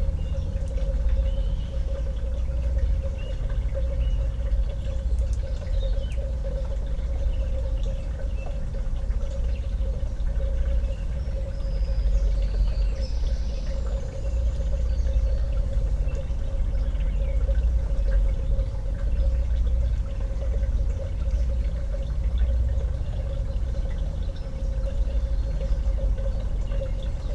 Grybeliai, Lithuania, in a tube
two small omnis in the tube